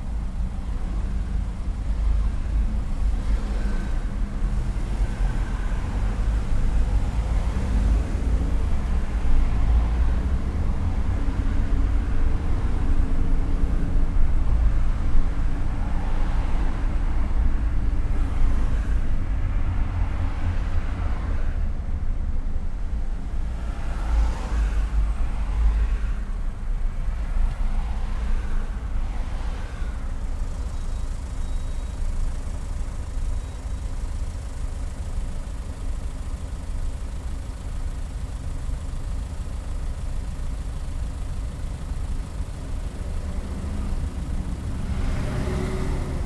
soundmap: köln/ nrw
im berufverkehr morgens
project: social ambiences/ listen to the people - in & outdoor nearfield recordings